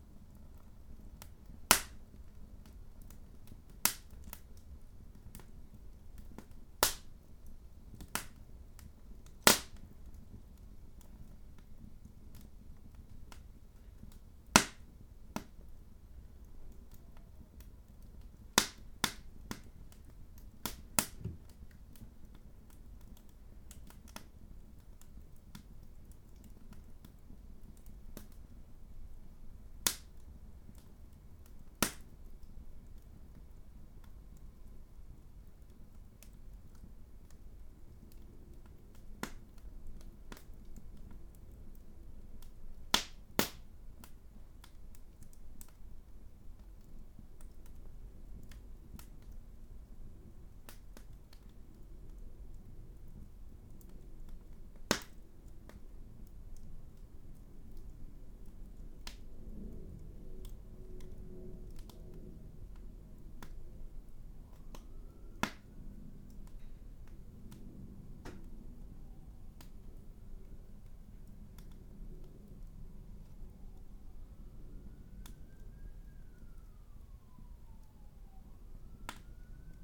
My Dining Room, Reading, UK - lighting a fire
The first time a fire has been lit in the dining room for a few years; it was a bit smoky but very nice to see flames in the grate and to feel briefly connected to the old soundscape of this house - the pre-central-heating soundscape. Mixed with 2015 police sirens.